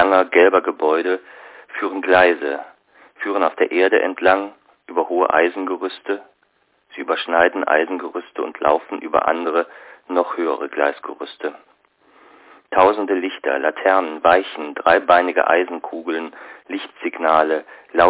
{"title": "Gleisdreieck 1924 - Zoo oder Briefe nicht über die Liebe (1923) - Viktor Sklovskij", "latitude": "52.50", "longitude": "13.38", "altitude": "37", "timezone": "GMT+1"}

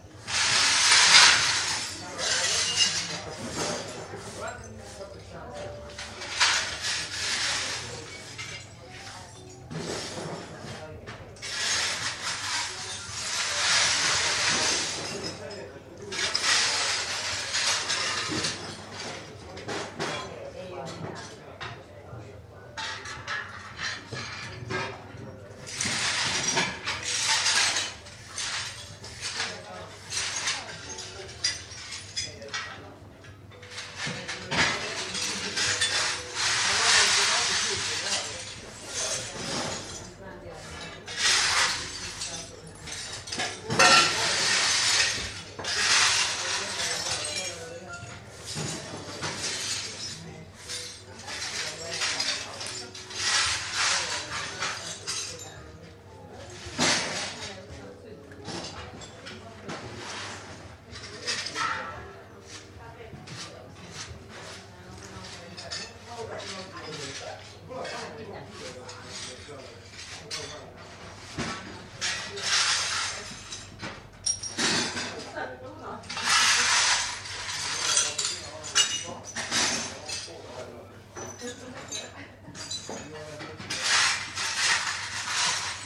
{"title": "broken window, Pikk jalg, Tallinn", "date": "2011-04-18 11:01:00", "description": "glass, broken window, coffee-shop", "latitude": "59.44", "longitude": "24.74", "altitude": "53", "timezone": "Europe/Tallinn"}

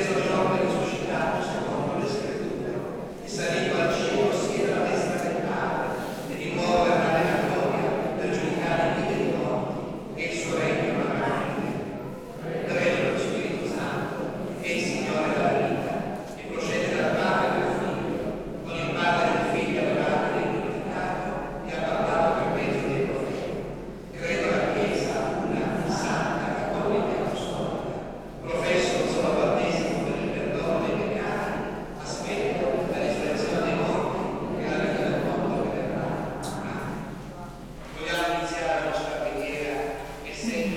{"title": "lipari, st.pietro - church service", "date": "2009-10-18 19:20:00", "description": "sunday evening church service at st.pietro, lipari", "latitude": "38.47", "longitude": "14.95", "altitude": "19", "timezone": "Europe/Berlin"}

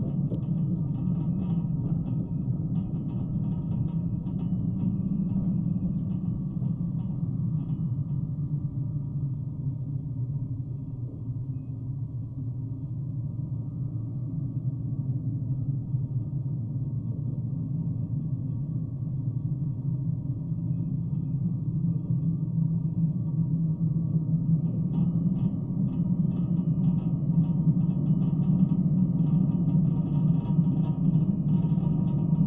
{"title": "Florac, France - Antenna drones", "date": "2016-04-29 14:20:00", "description": "This is a very big antenna, supported by cables. This is the invisible drone sounds of the wind, recorded with contact microphones.\nUsed : Audiatalia contact miscrophones used mono on a cable.", "latitude": "44.33", "longitude": "3.57", "altitude": "1056", "timezone": "Europe/Paris"}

